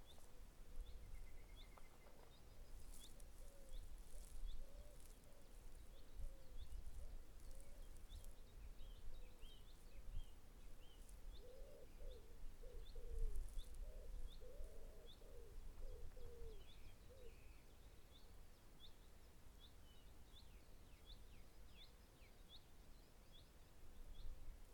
Kelling Heath, Unnamed Road, Holt, UK - Kelling Heath 2 by Ali Houiellebecq
Walking through trees on the edge of a heath and listening to the birds basking in the sunshine of a June day in Lockdown in North Norfolk in the UK. Recording made by sound artist Ali Houiellebecq.